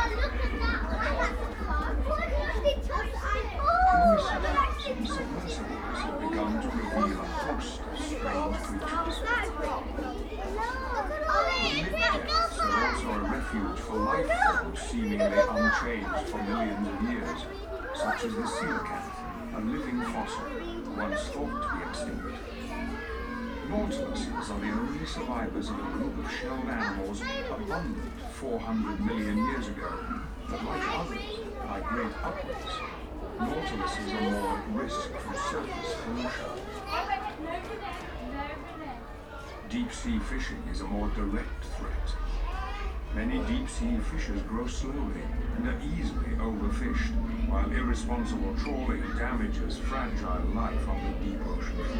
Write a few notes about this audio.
The Deep ... Hull ... entrance to the deep water feature ... open lavalier mics clipped to baseball cap ... plenty of sounds and noise ...